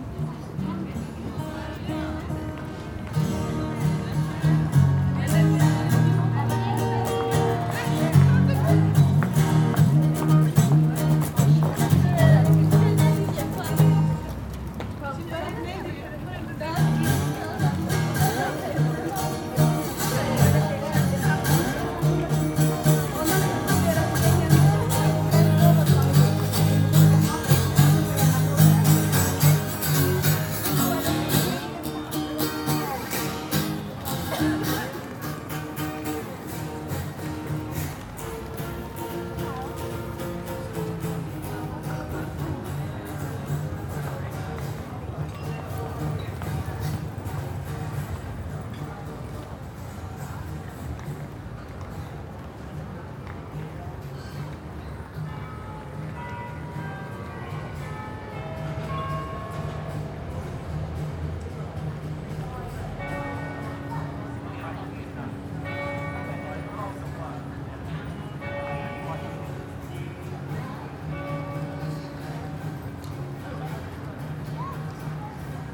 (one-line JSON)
{"title": "St. Mary's Butts, Reading, UK - Busker and bell", "date": "2011-05-21 15:59:00", "description": "This is the sound of a busker playing slide guitar with a cigarette lighter used as a sort of slide. You can also hear the bell of St. Mary's Church letting us know it's 4pm. Recorded with Naiant X-X omni directional microphones.", "latitude": "51.45", "longitude": "-0.97", "altitude": "48", "timezone": "Europe/London"}